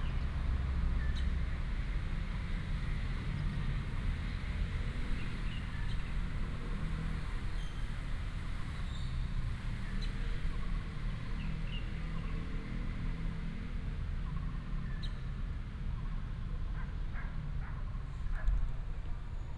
{"title": "Zhongshan Rd., 苗栗市 - in the Park", "date": "2017-03-22 16:36:00", "description": "Fireworks sound, bird sound, The train runs through, Traffic sound", "latitude": "24.56", "longitude": "120.82", "altitude": "53", "timezone": "Asia/Taipei"}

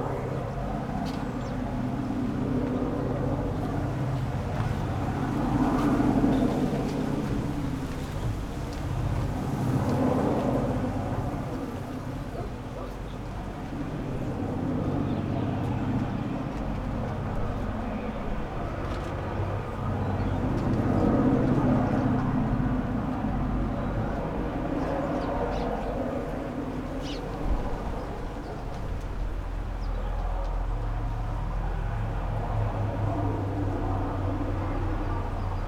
{"title": "stromboli, ginostra - aliscafo in the distance, sirocco", "date": "2009-10-21 15:40:00", "description": "on of the dirty aliscafo ferry boats arriving at ginostra. one can hear these boats from far away. very typical sound here. people depend on these boats. in autumn and winter, it can happen that they can't stop at the harbour for days because of wind and waves.", "latitude": "38.79", "longitude": "15.19", "altitude": "94", "timezone": "Europe/Rome"}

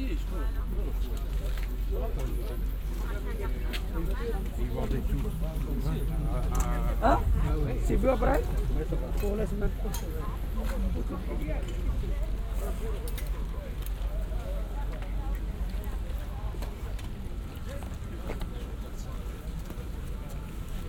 {"title": "Tournai, Belgium - Sunday Flea Market", "date": "2022-02-27 10:30:00", "description": "Tournai flea market on Sunday morning", "latitude": "50.60", "longitude": "3.41", "altitude": "23", "timezone": "Europe/Brussels"}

